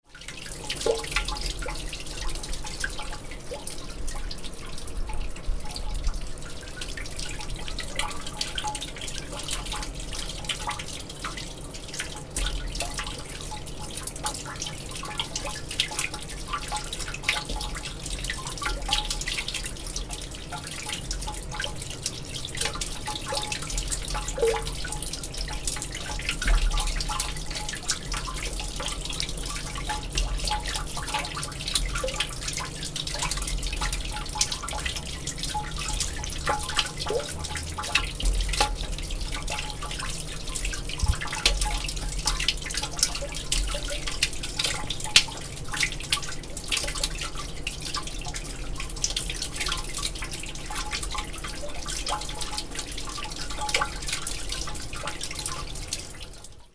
Orrtorget, Sollefteå, Rain dripping down through manhole cover
On the soundwalk day (on the World Listening Day) which happened to be a rainy one we indeed realized that rain is present very much in the soundscape, in different ways. As a starting point we did listen very closely to the water dripping down through the manhole cover at the Orrtorget square. The manhole cover is just by the house wall under a small roof where we found shelter from the rain. WLD